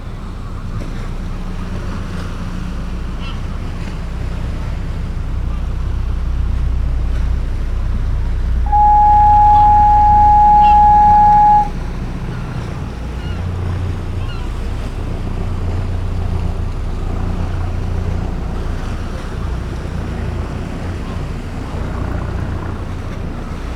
Whitby, United Kingdom - Klaxon at the end of East Pier
Klaxon at the end of East Pier ... clear day ... malfunction ..? on test..? waves ... voices ... herring gulls ... boat goes by ... lavalier mics clipped to sandwich box lid ...
Whitby, UK, 9 April 2016